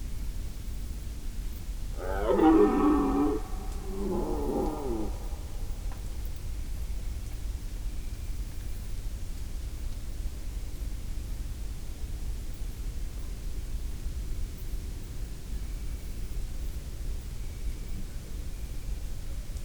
Opatje selo - Lokvica, 5291 Miren, Slovenia - Elk rut
Elk rutting. Lom Uši Pro, AB stereo array 50cm apart.